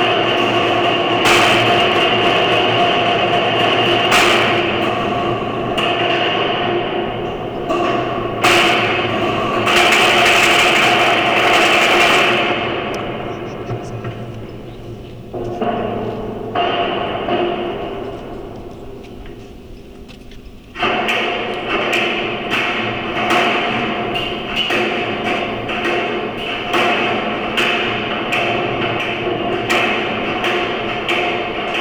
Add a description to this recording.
A collective improvisation for walking bridge and contact microphones. Produced during the workshop "Radical Listening" at the Fine Arts Faculty in Cuenca, Spain. Technical details: 2 C-series contact microphones.